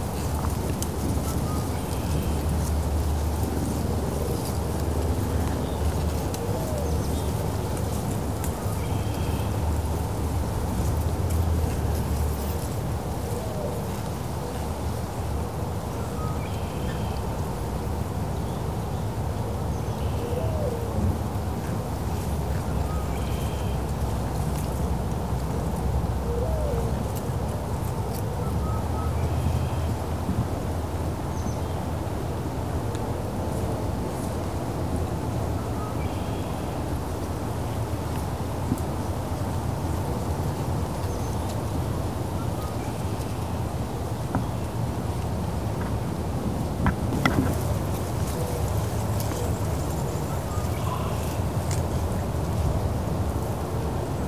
Ken Euers Nature Area, Green Bay, WI, USA - Rattling reeds and melting snow
Brown County, Wisconsin, United States of America